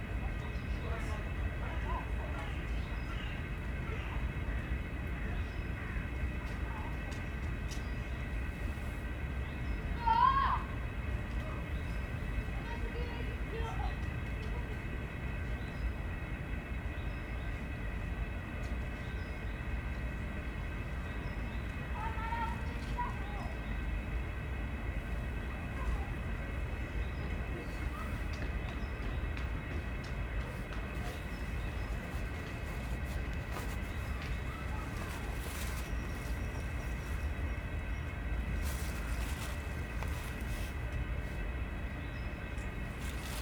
Rhinstraße, Berlin, Germany - Under the pipes - a surreal soundscape as the light fades
Deutschland, 18 December, ~16:00